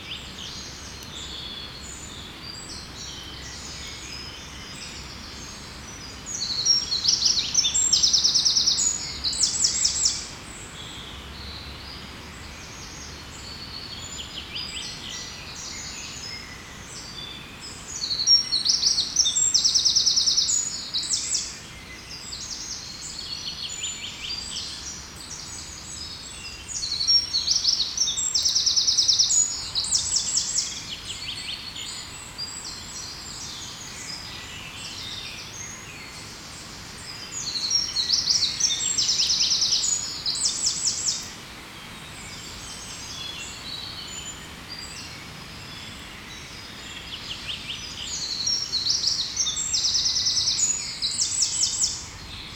{"title": "Mont-Saint-Guibert, Belgique - In the woods", "date": "2017-05-20 09:30:00", "description": "Recording of the birds during springtime, in the woods of Mont-St-Guibert. There's a lot of wind in the trees.", "latitude": "50.64", "longitude": "4.62", "altitude": "134", "timezone": "Europe/Brussels"}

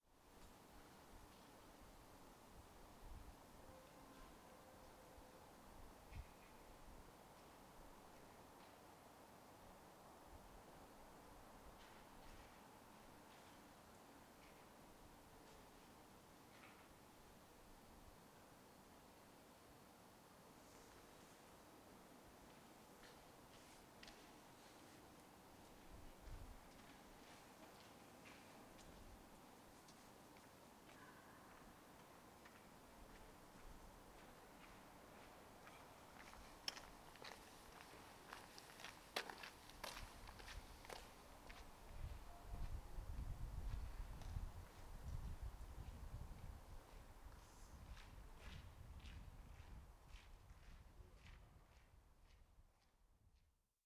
{"title": "Berlin: Vermessungspunkt Friedelstraße / Maybachufer - Klangvermessung Kreuzkölln ::: 19.02.2011 ::: 03:42", "date": "2011-02-19 03:42:00", "latitude": "52.49", "longitude": "13.43", "altitude": "39", "timezone": "Europe/Berlin"}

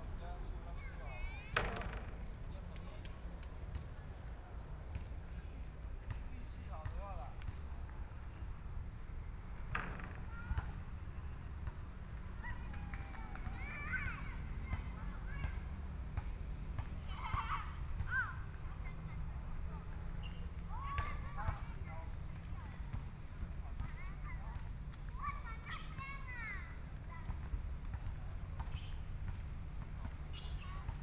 {"title": "啟模里, Yuli Township - In the park", "date": "2014-09-07 16:29:00", "description": "In the park", "latitude": "23.34", "longitude": "121.32", "altitude": "131", "timezone": "Asia/Taipei"}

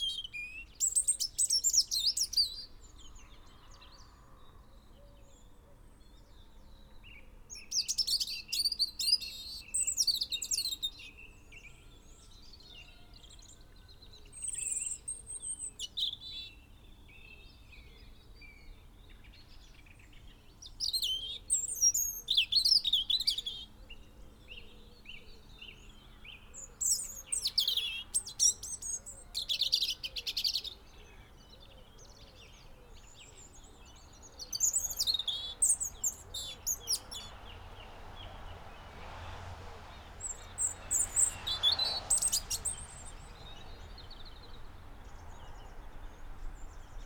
{"title": "Off Main Street, Helperthorpe, Malton, UK - robin song ...", "date": "2020-02-08 07:10:00", "description": "robin song ... zoom h5 and dpa 4060 xlr ... lav mics clipped to twigs ... bird calls ... song ... blackbird ... crow ... blue tit ... pheasant ... wren ... song thrush ... background noise ...", "latitude": "54.12", "longitude": "-0.54", "altitude": "85", "timezone": "Europe/London"}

